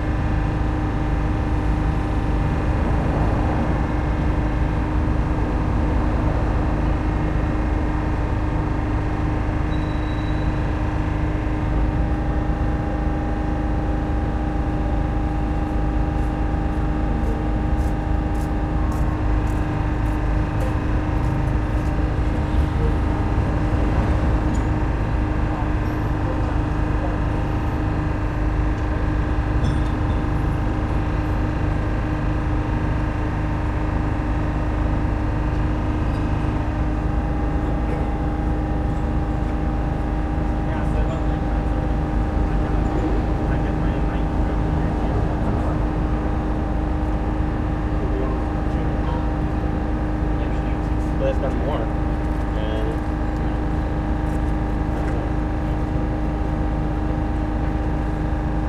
Berlin: Vermessungspunkt Friedelstraße / Maybachufer - Klangvermessung Kreuzkölln ::: 27.11.2013 ::: 13:35
27 November, ~14:00